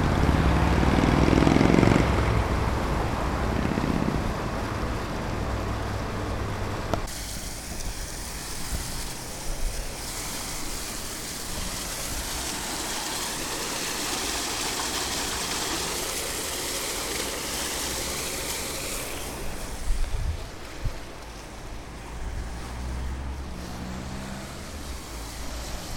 1, place des rencontres 26500 bourg-les-valence